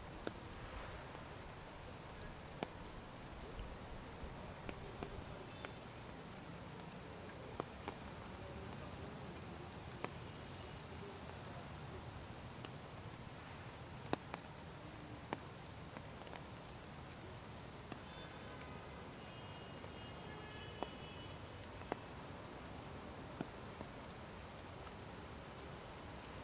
Parque - Tenente Siqueira Campos - Trianon - Rua Peixoto Gomide, 949 - Cerqueira César, São Paulo - SP, 01409-001, Brasil - Dia de chuva na ponte do Parque Trianon - SP
O áudio da paisagem sonora foi gravado no cruzamento onde se encontra a ponte dentro do Parque Trianon, em São Paulo - SP, Brasil, no dia 17 de setembro de 2018, às 12:46pm, o clima estava chuvoso e com ventos leves. Foram usados apenas o gravador Tascam DR-40 com seus microfones condensadores cardióides, direcionados para fora, acoplado em um Tripé Benro.